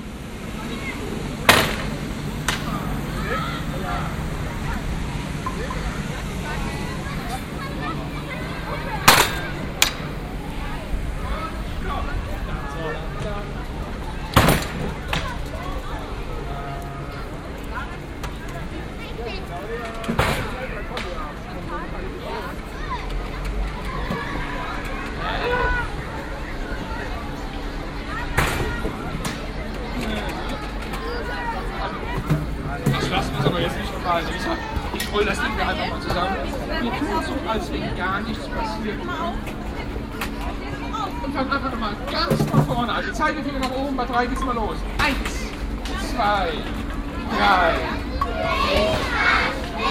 Binaural recording of the square. Elenvth of several recordings to describe the square acoustically. At the children's day the square was full of entertainment for children, beyond others a magician whose show is audible. There is one omission since the children were too loud...